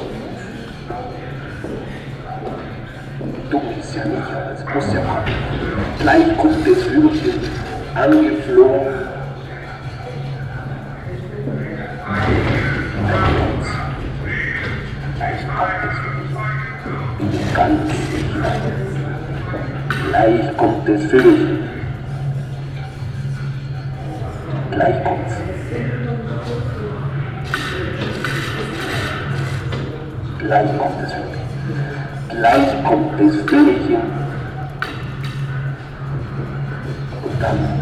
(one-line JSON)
{"title": "Altstadt-Nord, Köln, Deutschland - Cologne, Museum Ludwig, machines by Andreas Fischer", "date": "2012-12-26 13:45:00", "description": "Inside the museum in the basement area - during an exhibition of sound machines by artist Andreas Fischer.Here a bird house with a metal spiral and a small stick. In the backgound the sound of other machines and visitors.\nsoundmap nrw - social ambiences, topographic field recordings and art places", "latitude": "50.94", "longitude": "6.96", "altitude": "56", "timezone": "Europe/Berlin"}